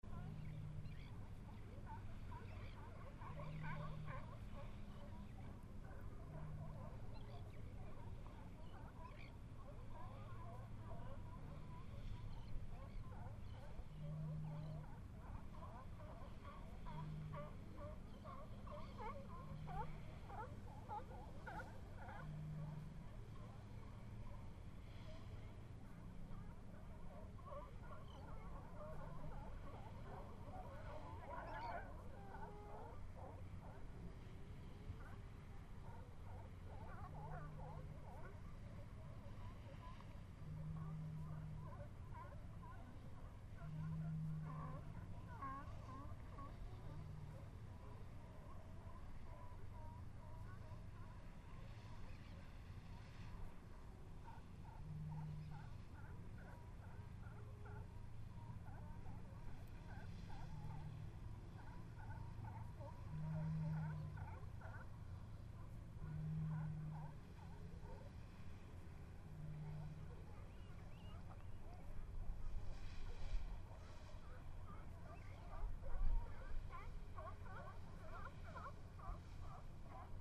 Sonoma, CA, USA - Bodega Bay, Ca
Bouy, California sea lions in a distance and boats entering mouth of Bodega Bay ...Sunday trip with Bara K.